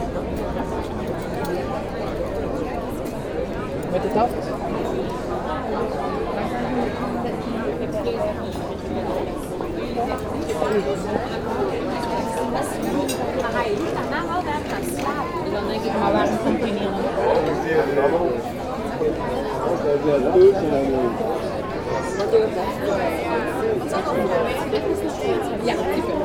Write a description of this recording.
Festive atmosphere along the canal. People drink by the water and are happy to be together.